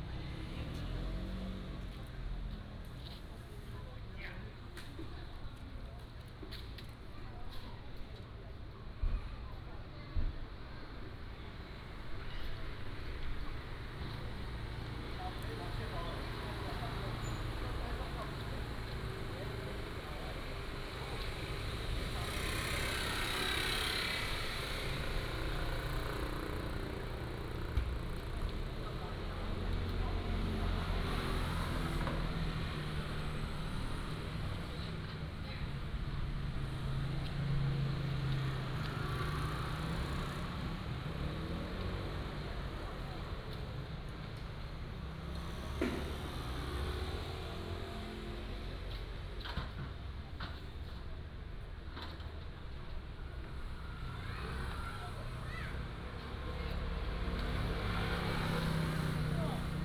代天府, Jincheng Township - in front of the temple
in front of the temple, Traffic Sound